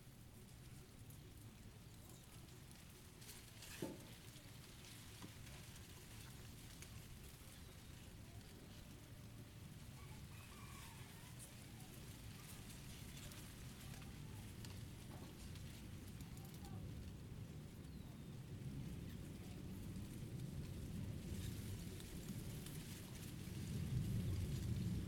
San Vicente, Antioquia, Colombia - The wind that shakes the Guaduas
Field record made in rural areas close to San Vicente, Antioquia, Colombia.
Guadua's trees been shaked by the wind.
Inner microphones Zoom H2n placed 1m over the ground.
XY mode.